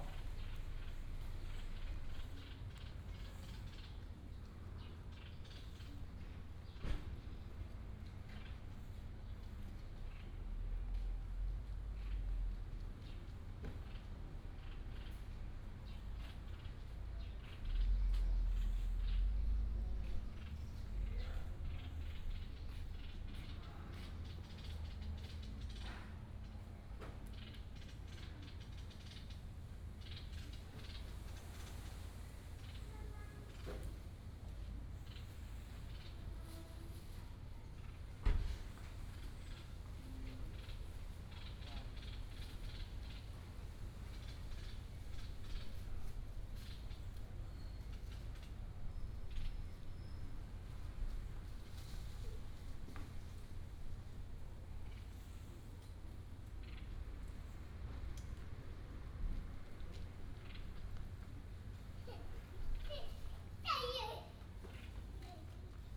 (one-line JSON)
{"title": "三層福安宮, 大溪區 - In the square of the temple", "date": "2017-08-09 16:26:00", "description": "In the square of the temple, Quiet little village, birds sound", "latitude": "24.86", "longitude": "121.30", "altitude": "214", "timezone": "Asia/Taipei"}